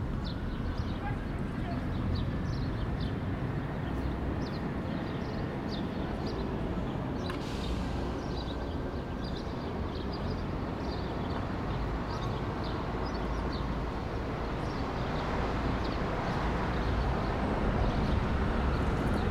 August-Baudert-Platz 4, 99423 Weimar, Germany - A long narrative of place in Weimar
*Listen with headphones for best acoustic results.
A busy atmosphere with regular traffic of all kinds and bird life. New textures are formed as wheels ride on cobble stones on the main transit road. The space colors low frequencies and can be reverberant with time.
Major city arrivals and transits take place here. Stereo field is vivid and easily distinguishable.
Recording and monitoring gear: Zoom F4 Field Recorder, LOM MikroUsi Pro, Beyerdynamic DT 770 PRO/ DT 1990 PRO.
Thüringen, Deutschland, 23 July